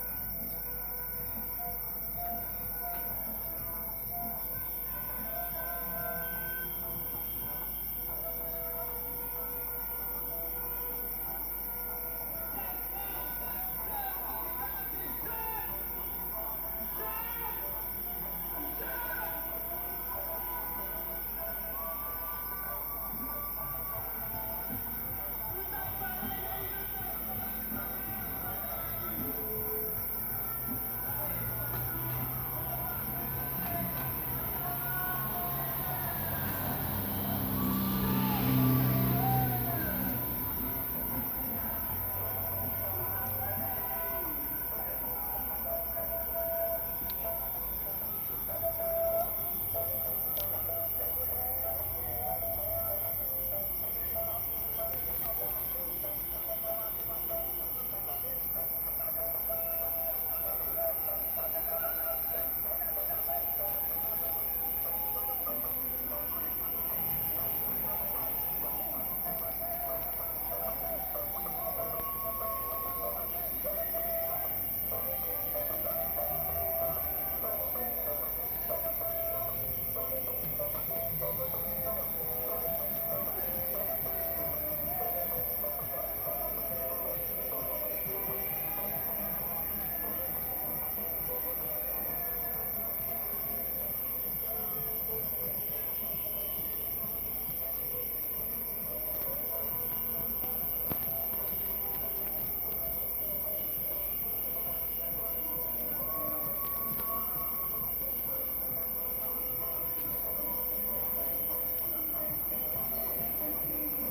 Rue Alsace Corre, Cilaos, Réunion - 20200313 210126 hystérie électorale CILAOS
hystérie électorale CILAOS ÎLE DE LA RÉUNION, enregistrée au smartphone.